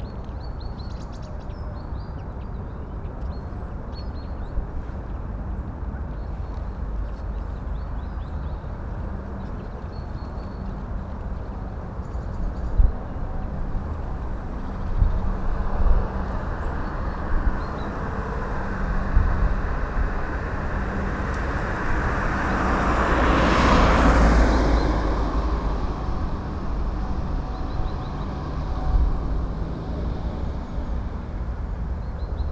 {"date": "2010-11-11 15:37:00", "description": "Dagneux, Chemin des Irandes.\nBy JM Charcot", "latitude": "45.86", "longitude": "5.07", "altitude": "213", "timezone": "Europe/Paris"}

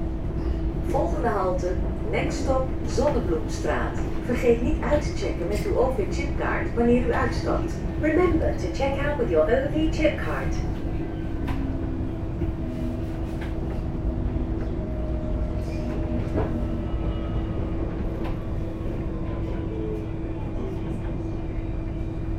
{"title": "Den Haag, Nederlands - Den Haag tramway", "date": "2019-03-30 11:45:00", "description": "Ride into the Den Haag tramway, from Loosduinen, Laan van Meerdervoort, Heliotrooplaan stop on the Line 3, to Elandstraat, Den Haag centrum.", "latitude": "52.06", "longitude": "4.23", "altitude": "4", "timezone": "Europe/Amsterdam"}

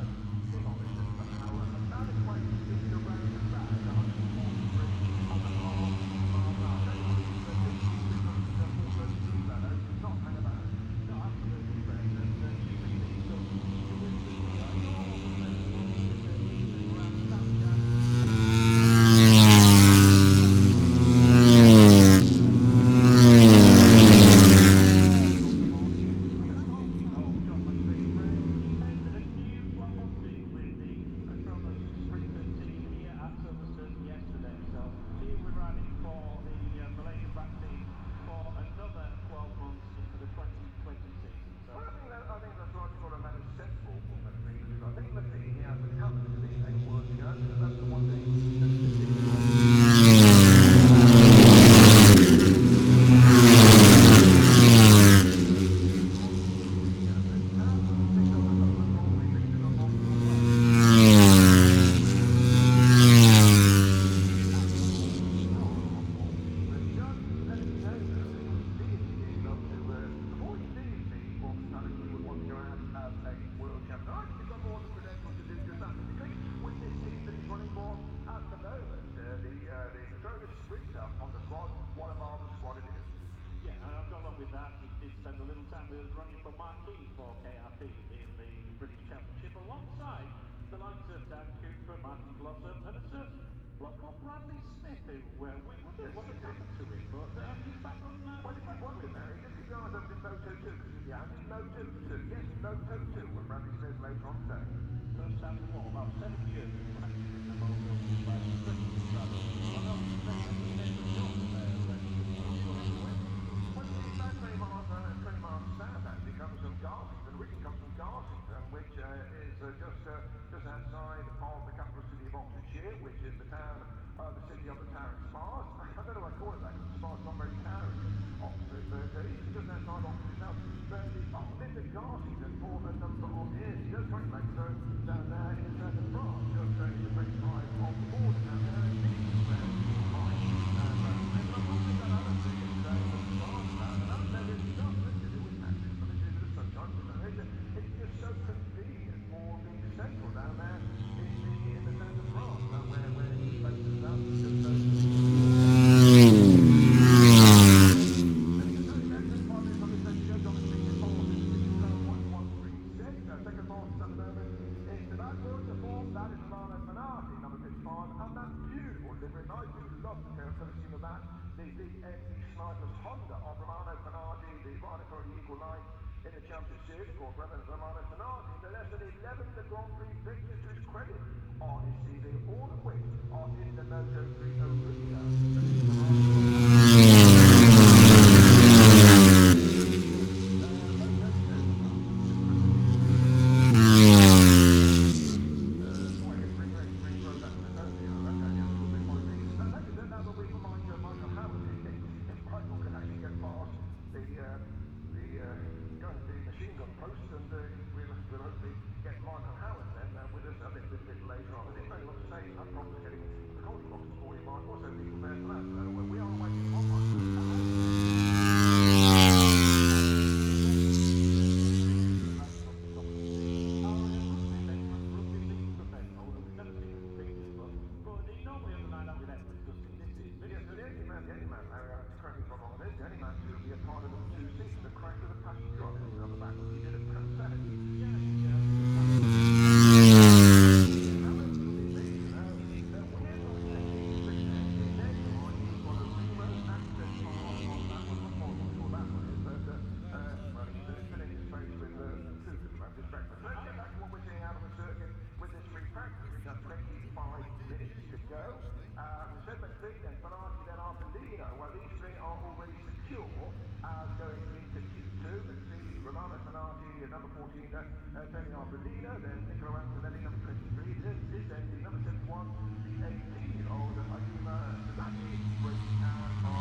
{"title": "Silverstone Circuit, Towcester, UK - british motorcycle grand prix 2019 ... moto three ... fp3", "date": "2019-08-24 09:00:00", "description": "british motorcycle grand prix 2019 ... moto three ... fp3 ... maggotts ... some commentary ... lavalier mics clipped to bag ... background noise ...", "latitude": "52.07", "longitude": "-1.01", "altitude": "156", "timezone": "Europe/London"}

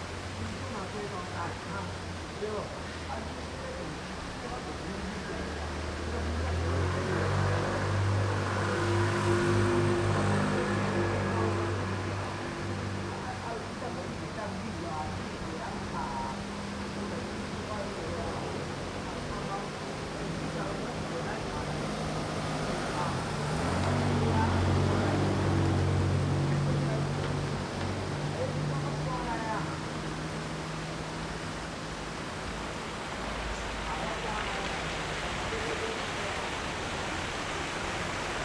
{"title": "Yongfeng Rd., Tucheng Dist., New Taipei City - Beside streams", "date": "2012-02-16 15:30:00", "description": "sound of water streams, Beside streams, Traffic Sound\nZoom H4n +Rode NT4", "latitude": "24.97", "longitude": "121.47", "altitude": "90", "timezone": "Asia/Taipei"}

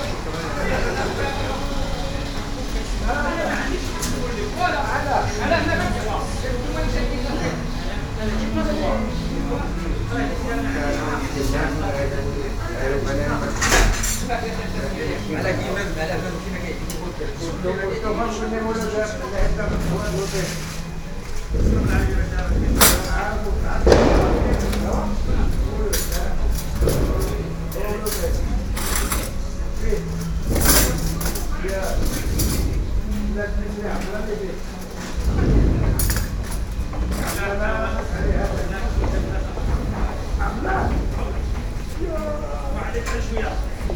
{"title": "Paris, Marché des Enfants Rouges, market ambience", "date": "2011-05-20 10:40:00", "description": "short walk around the roof-covered market", "latitude": "48.86", "longitude": "2.36", "timezone": "Europe/Paris"}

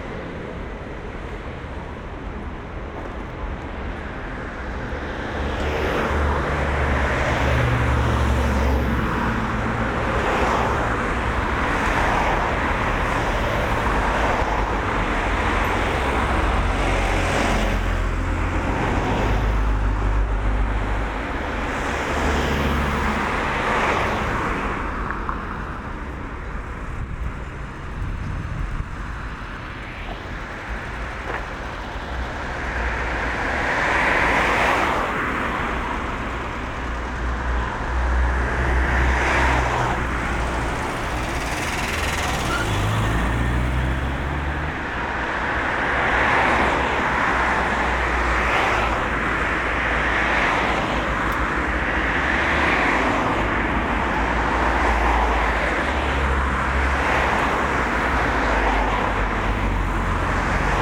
{"title": "berlin: mariendorfer damm - the city, the country & me: mariendorf soundwalk", "date": "2013-09-04 11:25:00", "description": "soundwalk between westphalweg and ullsteinstraße\nthe city, the country & me: september 4, 2013", "latitude": "52.45", "longitude": "13.39", "altitude": "48", "timezone": "Europe/Berlin"}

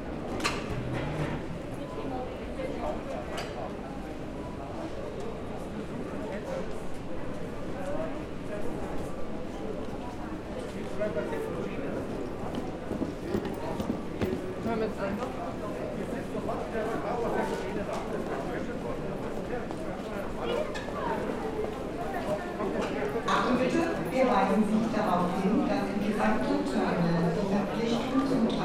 {"title": "Frankfurt (Main) Flughafen Regionalbahnhof, Hugo-Eckener-Ring, Frankfurt am Main, Deutschland - Corona Test STation", "date": "2020-09-08 18:00:00", "description": "A new moment at the airport in September 2020 was the Corona Test Station, where travellers could make a test after coming from anohter area. It is heard how people are explaining how the procedure is functioning, where they get the result of the test, other travellers are discussing in chinese and other languages.", "latitude": "50.05", "longitude": "8.57", "altitude": "117", "timezone": "Europe/Berlin"}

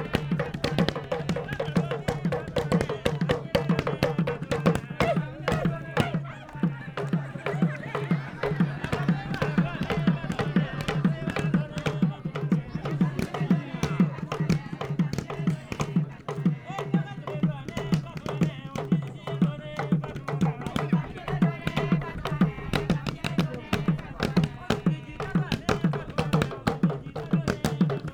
{"title": "Sourou, Burkina Faso - Traditionnale Singings", "date": "2016-05-22 21:57:00", "description": "Party organized at night around fire, singings", "latitude": "13.00", "longitude": "-3.42", "timezone": "Africa/Ouagadougou"}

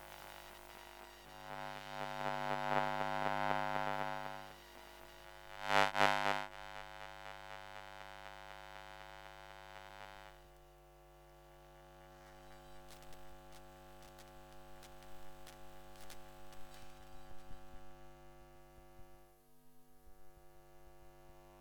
{"title": "Cuenca, Cuenca, España - #SoundwalkingCuenca 2015-11-20 Coil pickup soundwalk, CDCE, Fine Arts Faculty, Cuenca, Spain", "date": "2015-11-20 13:50:00", "description": "A soundwalk through the Fine Arts Faculty Building, Cuenca, Spain, using a JRF coil pickup to register the electro-magnetic emissions of different electronic devices in the building.\nJFR coil pickup -> Sony PCM-D100", "latitude": "40.08", "longitude": "-2.15", "altitude": "943", "timezone": "Europe/Madrid"}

